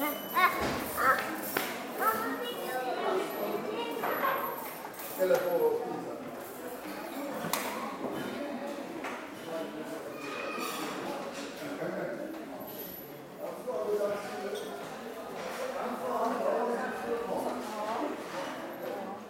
hjärta to hjärta, huge 2nd hand boutique